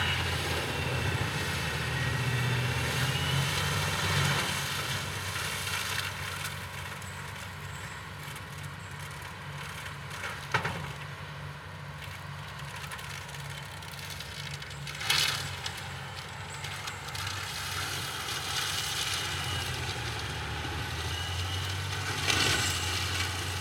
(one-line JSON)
{"title": "Rue Keyenbempt, Uccle, Belgique - deforestation during quarantine", "date": "2020-03-23 08:09:00", "latitude": "50.79", "longitude": "4.32", "altitude": "30", "timezone": "Europe/Brussels"}